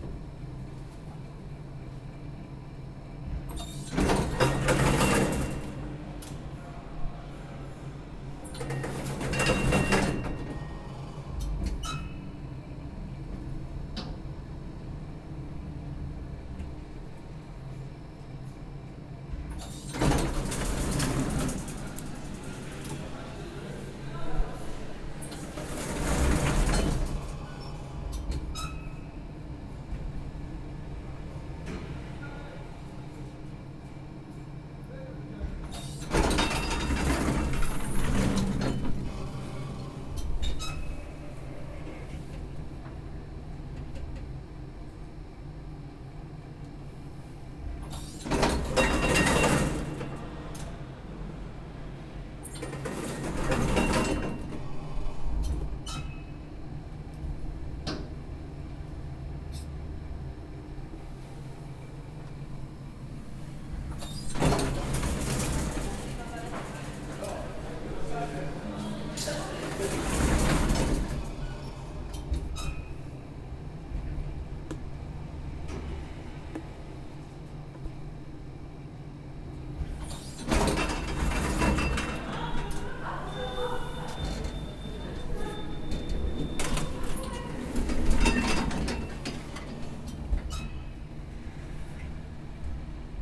Martin Buber St, Jerusalem - Elevator 2 at Bezalel Academy of Arts and Design
Elevator (2) at Bezalel Academy of Arts and Design.
Some people talking, Arabic and Hebrew.
25 March